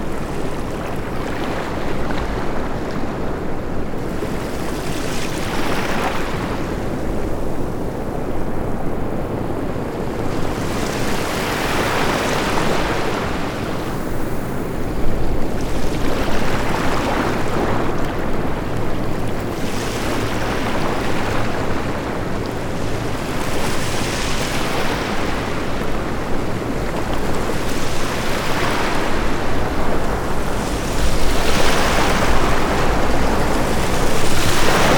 Cox's Bazar, Bangladesh - Ocean waves, Cox's bazar beach
Cox's bazar is known for it's very long sea beach. This recording was made on a summer afternoon on the beach. There was no one around, it was totally empty. So you get to hear the Bay of Bengal without any interruption.
কক্সবাজার জেলা, চট্টগ্রাম বিভাগ, বাংলাদেশ